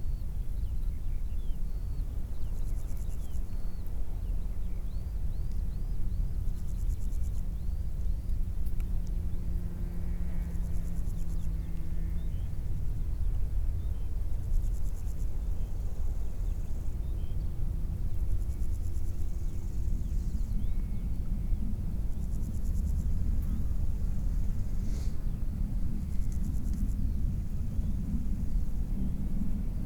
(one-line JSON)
{"title": "Alprech creek", "date": "2009-07-18 15:00:00", "description": "Summer afternoon on a promontory next to the seashore, with crickets, birds, a large plane up high and a toy plane near.", "latitude": "50.69", "longitude": "1.56", "altitude": "17", "timezone": "Europe/Paris"}